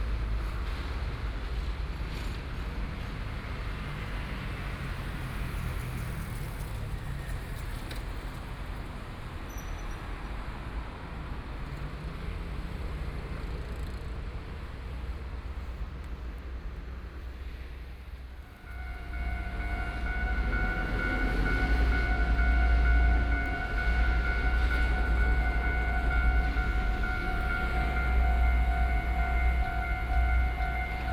Yuandong Rd., Neili - In front of the railroad crossing
Traffic Sound, Traveling by train, Binaural recording, Zoom H6+ Soundman OKM II